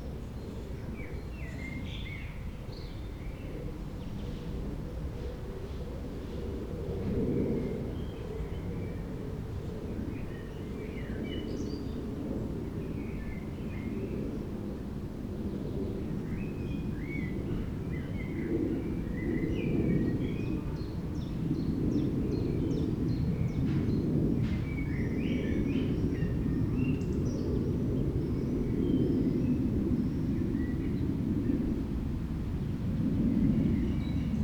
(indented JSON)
{
  "title": "wermelskirchen, berliner straße: stadtfriedhof - the city, the country & me: cemetery",
  "date": "2011-05-07 11:29:00",
  "description": "singing birds, old man with trolley\nthe city, the country & me: may 7, 2011",
  "latitude": "51.14",
  "longitude": "7.22",
  "altitude": "305",
  "timezone": "Europe/Berlin"
}